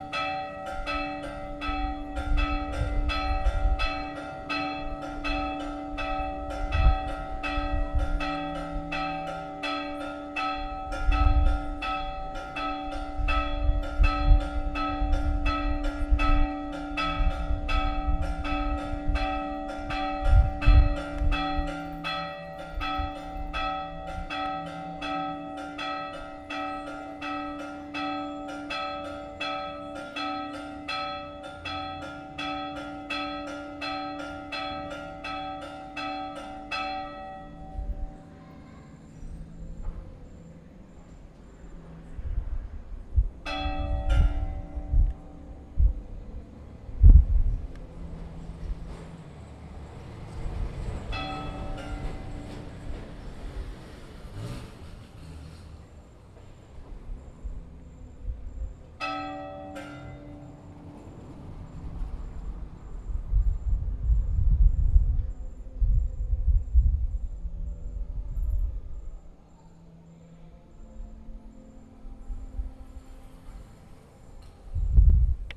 São Félix, BA, Brasil - Sinos
Sinos da Igreja na Praça José Ramos...Gravado com Tascam DR-40.
Paulo Vitor